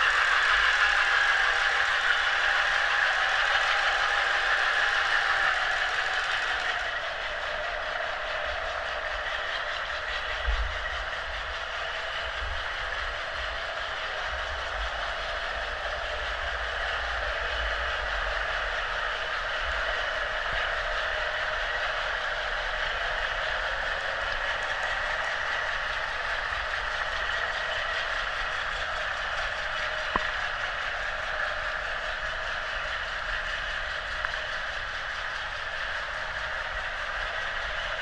hydrophone recording. the boar is passing by...
7 June 2019, 18:40